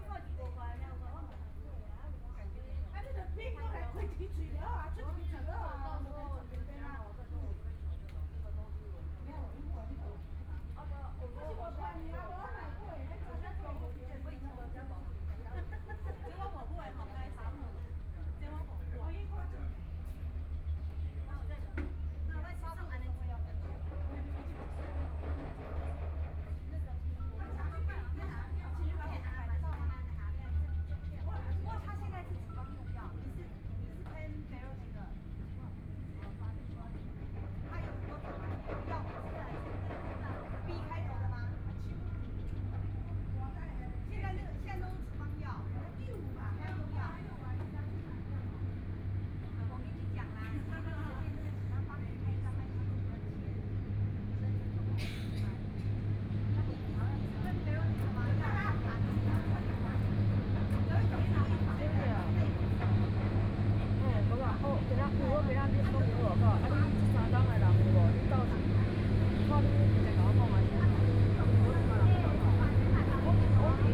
羅東林業文化園區, 羅東鎮信義里 - Tourist
in the Park, Birdsong sound, Tourist, Far from the construction site noise, Trains traveling through
Luodong Township, Yilan County, Taiwan